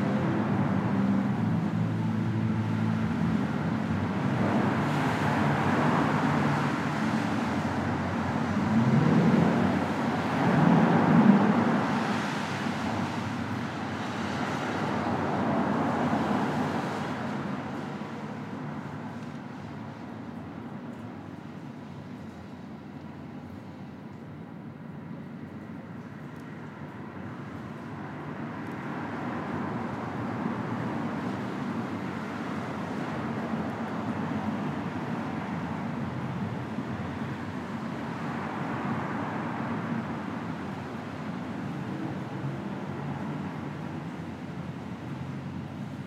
Sounds of traffic under a railroad bridge in Ridgewood, Queens.
United States, March 2022